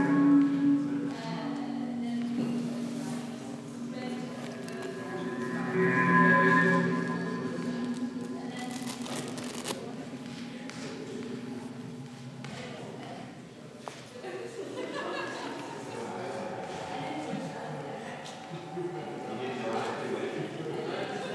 Prag, Tschechische Republik, Sokolska - musique concréte
what was to hear in the yard of sokolska28 at this very evening